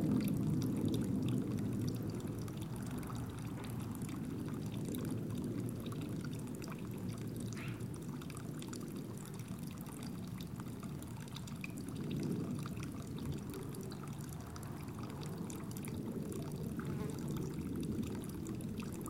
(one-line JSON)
{"title": "In the Provence", "date": "2011-09-20 14:00:00", "description": "Military plane flying over the countryside in Provence.", "latitude": "43.78", "longitude": "5.39", "altitude": "299", "timezone": "Europe/Paris"}